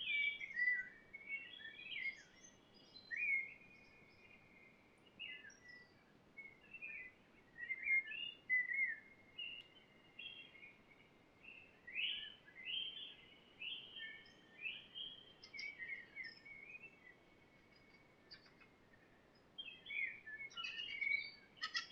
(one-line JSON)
{"title": "Lithuania, Sirutenai, forest, early spring", "date": "2011-04-02 17:40:00", "description": "forest in early spring", "latitude": "55.55", "longitude": "25.63", "altitude": "146", "timezone": "Europe/Vilnius"}